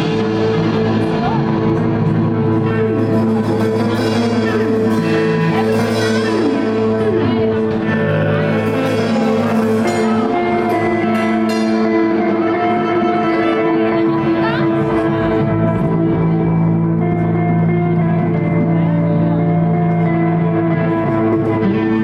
φοιτητικό κέντρο πανεπιστημιου βουτών, Iraklio, Greece - primal jam
You're listening to a primal, unplanned jam that took place in fititiko kendro, university of Crete. The jam was organized by a group of people in the context of a festival called Makrovoutes. People who attended the festival contributed with guitars, drums, lute and other instruments. I used an h1n zoom recorder.
Αποκεντρωμένη Διοίκηση Κρήτης, Ελλάς, 8 July 2022, ~01:00